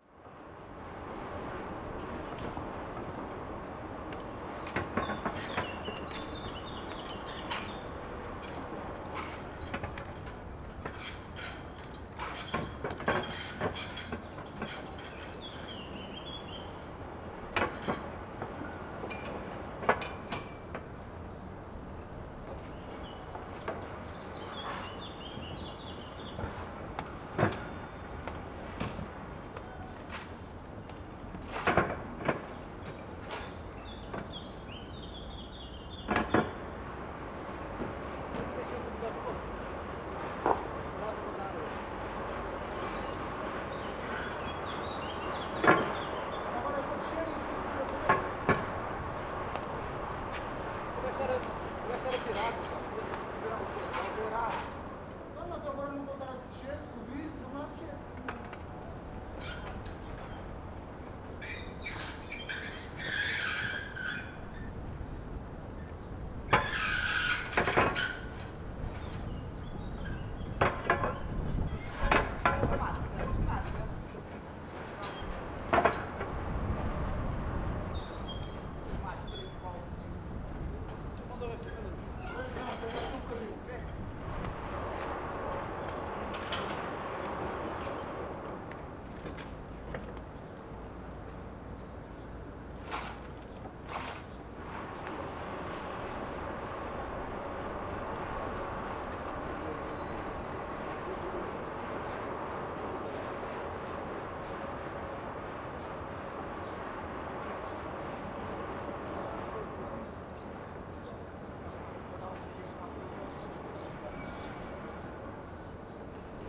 lisbon, goethe institut - garden, construction work
recorded in the garden of goethe institut, workers fixing a roof. various sounds of construction works in this part of the city
Lisbon, Portugal, 30 June 2010